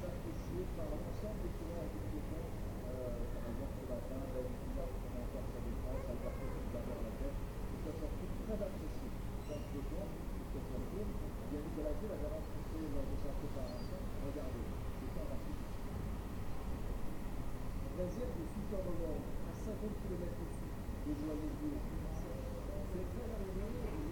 Juan-les-Pins, Antibes, France - All the bells striking twelve
There are several bells close to the place we are staying in and they all have a slightly different idea of when exactly the hour should be struck. Here are all the bells striking twelve noon, recorded from the sunny window ledge with the EDIROL R-09.
19 July 2013, 12:00